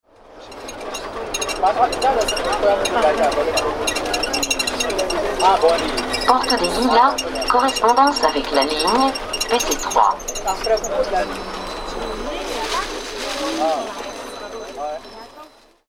RobotAtWork Porte des Lilas RadioFreeRobots